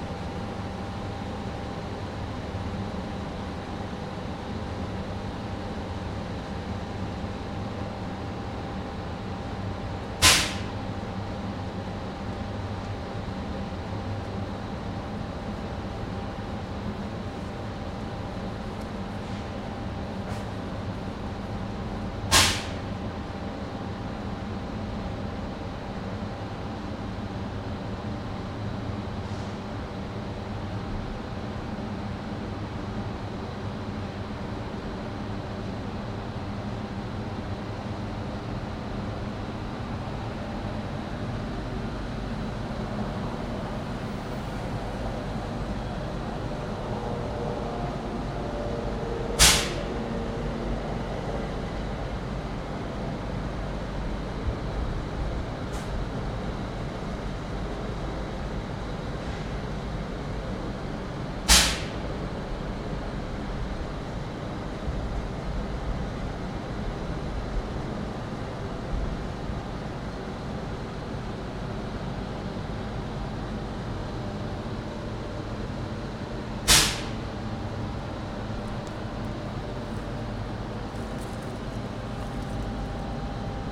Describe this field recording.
Recorded with Zoom H6 under bridge along the canal across from the steam releasing factory.